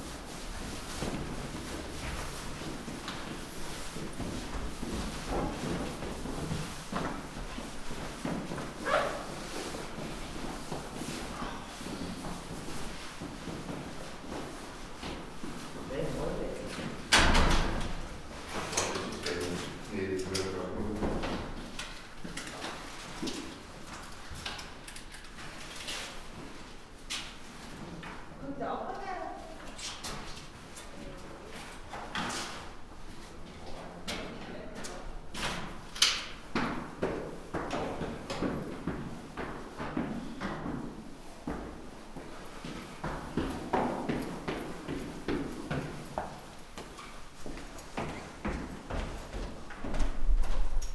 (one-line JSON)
{"title": "Alte City Pension, Rankestraße - morning staircase activity", "date": "2012-12-09 08:36:00", "description": "tenants and hotel guests walking up and down the stairs, leaving the building for breakfast. someone still using the dail-up connection. rustle of winder jackets. so dominant and present yet hardly noticeable in the whole set of everyday sounds. one of many sounds we filter out i guess.", "latitude": "52.50", "longitude": "13.33", "altitude": "45", "timezone": "Europe/Berlin"}